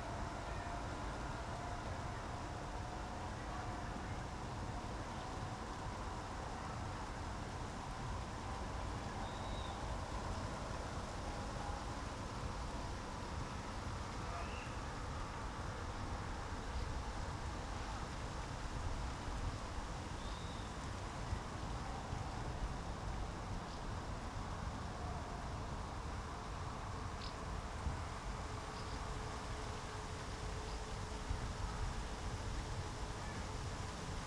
Tempelhofer Feld, Berlin, Deutschland - early evening ambience, at the poplar trees
place revisited in August
(Sony PCM D50, Primo EM172)
Berlin, Germany, 7 August 2017